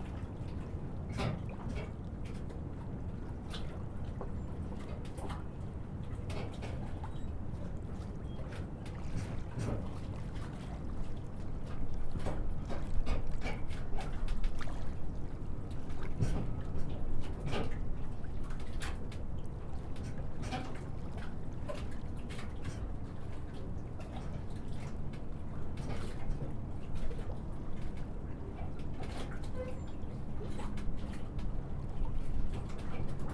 Recorded on the dock on Sainte-Helène island with a Zoom H4n in stereo.
Montréal, QC, Canada, 18 September, ~11:00